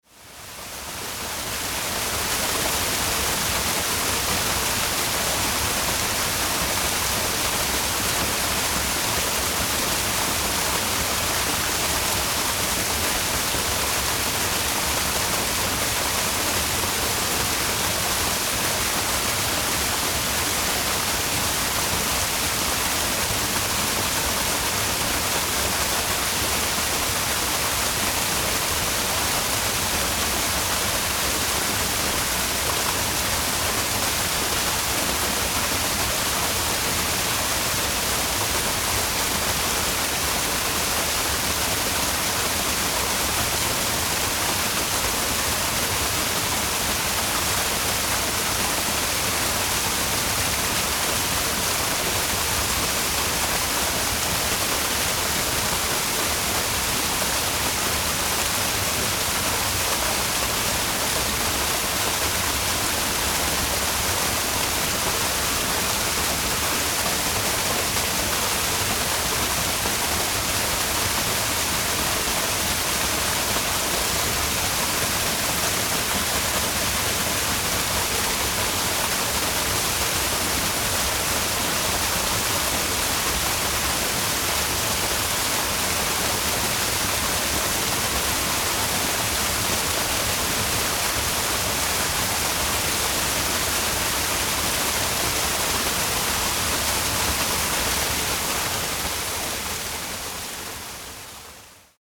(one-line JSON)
{"title": "Selce, Slovenija - Small waterfall from Britna stream", "date": "2020-01-19 11:39:00", "description": "Small waterfall from Britna stream on path to Gregocicev waterfall. Recorded with Zoom H5 and LOM Uši Pro.", "latitude": "46.22", "longitude": "13.66", "altitude": "262", "timezone": "Europe/Ljubljana"}